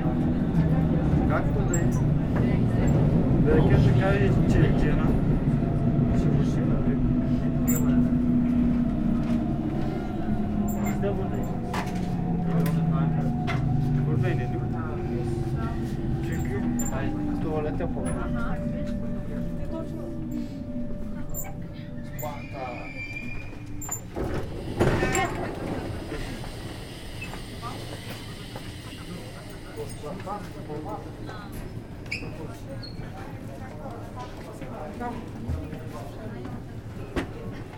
Neuwiedenthal, Hamburg, Deutschland - The S3 Buxtehude and stade train
The train going to Neuwiedenthal on evening. Some turkish people talking loudly and an angry woman with a bike.